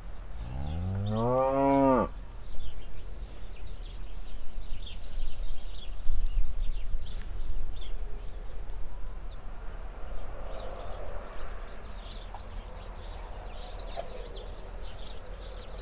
hupperdange, sparrows and cows
The sounds of a bush full of sparrows nearby a cow pasture on a windy summer morning.
Hupperdange, Spatzen und Kühe
Das Geräusch von einem Busch voller Spatzen nahe einer Kuhweide an einem windigen Sommermorgen.
Hupperdange, moineaux et vaches
Le bruit d’un essaim de moineaux à proximité d’une prairie avec des vaches un matin d’été venteux.